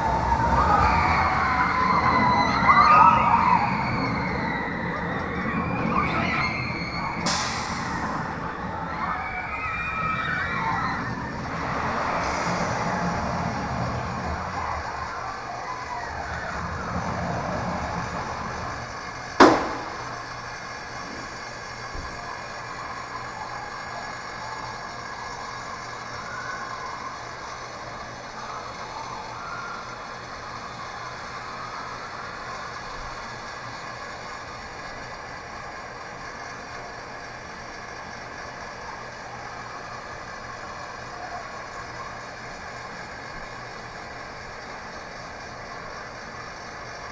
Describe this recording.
Near the amusement park called Walibi, you can hear the children playing loudly.